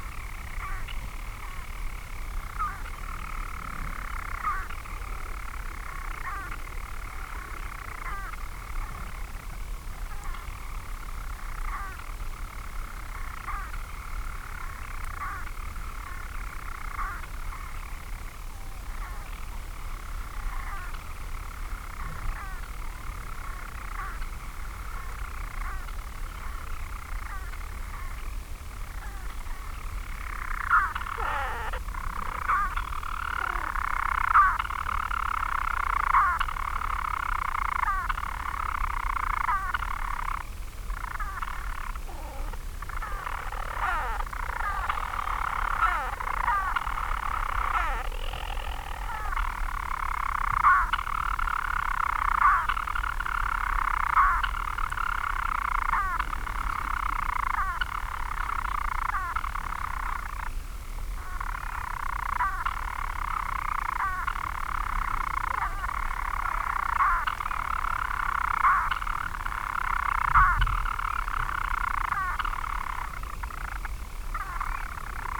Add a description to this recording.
Skokholm Island Bird Observatory ... storm petrel singing ..? birds nest in chambers in the dry stone walls ... they move up and down the spaces ... they also rotate while singing ... lots of thoughts that two males were singing in adjacent spaces ... open lavalier mics clipped to sandwich box ... on a bag close to wall ...